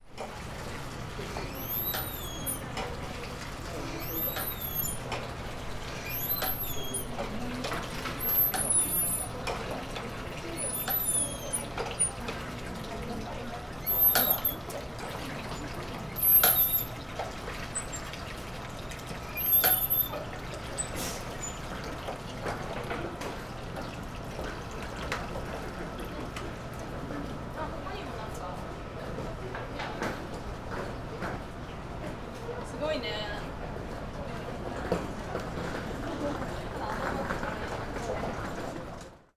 Osaka, Nanba district, Hozenji Yokocho Alley - manual water pump
visitors of the nearby shrine pumping water from a manual water pump into buckets in order to splash a statue of a saint.
泉北郡 (Senboku District), 近畿 (Kinki Region), 日本 (Japan), 31 March 2013, 14:00